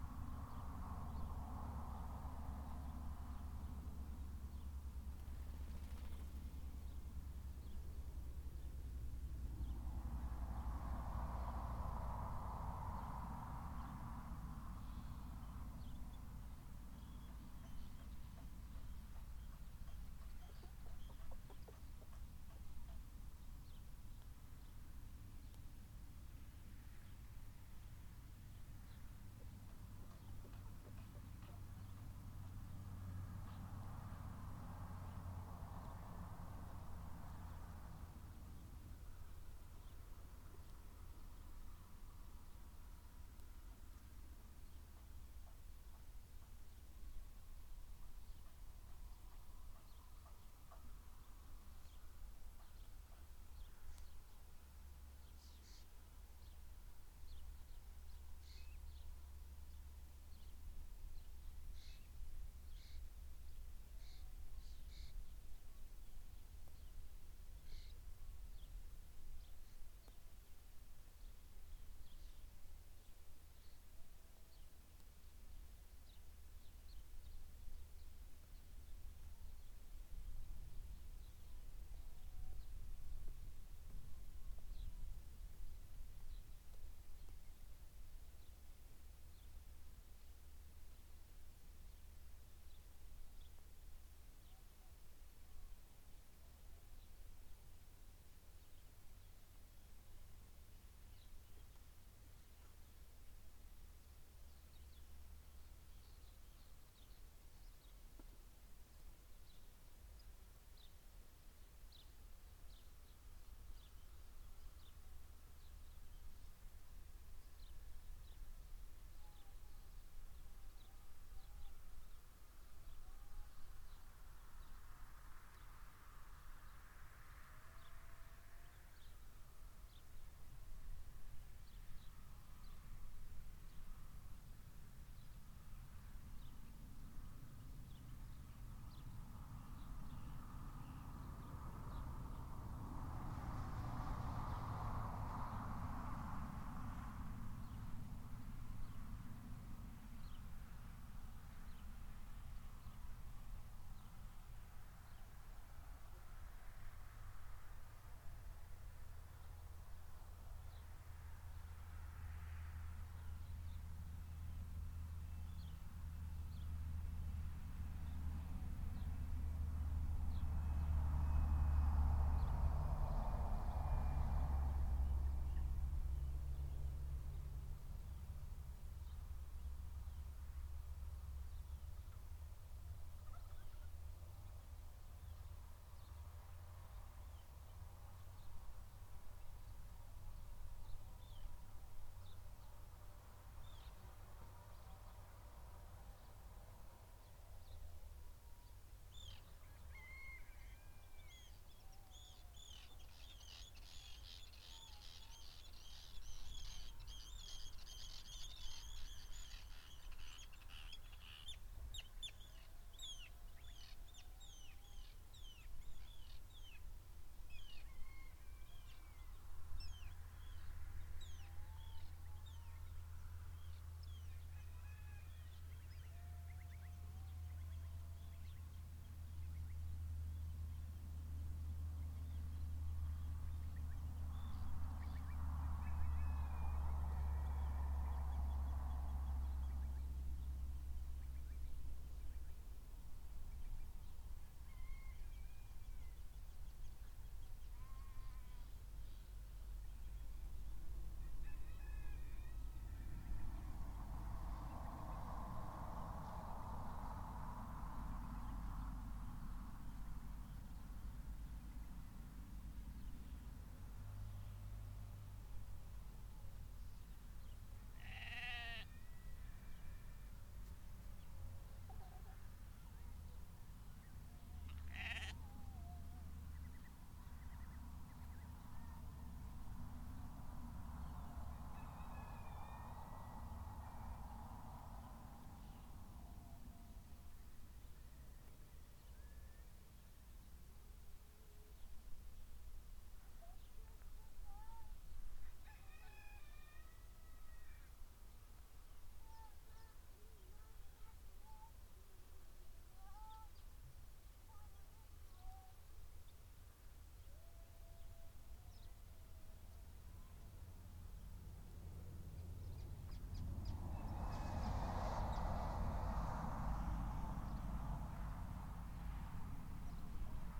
Burland Croft Trail, Trondra, Shetland Islands, UK - Gate, lamb and field
This is a recording featuring a very tame lamb and a creaky gate, heard at Burland Croft Trail; an amazing place run by Tommy and Mary Isbister. Tommy and Mary have been in Trondra since 1976, working and developing their crofts in a traditional way. Their main aim is to maintain native Shetland breeds of animals, poultry and crops, and to work with these animals and the environment in the tried-and-tested ways that sustained countless generations of Shetlanders in the past. The Burland Croft Trail is open all summer, and Mary and Tommy were incredibly helpful when I visited them, showing me around and introducing me to all their animals and also showing me some of the amazing knitwear produced by both Mary, Tommy and Mary's mothers, and their daughter, showing three generations of knitting and textile skill within one family. Tommy and Mary send their wool off to Jamieson & Smith to be scoured and spun, so it was beautiful to see the wool growing on the sheeps' backs.